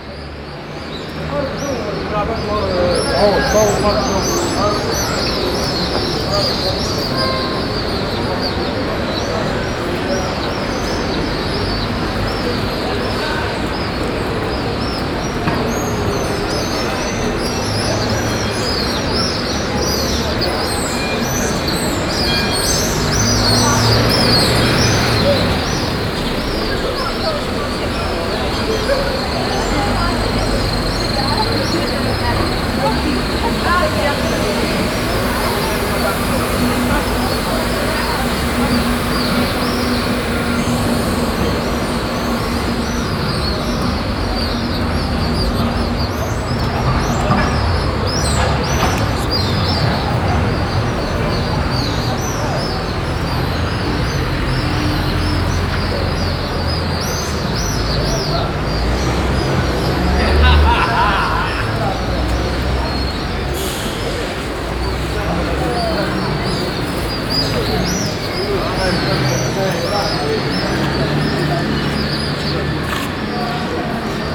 Ville Nouvelle, Tunis, Tunesien - tunis, avenue de carthage, traffic and eurasian swifts
Standing on the corner of the street in the evening. Passing by some trams and other traffic - all over in the air the sound of the birds flying low shortly before an upcoming thunderstorm.
international city scapes - social ambiences and topographic field recordings
Tunis, Tunisia, 5 May 2012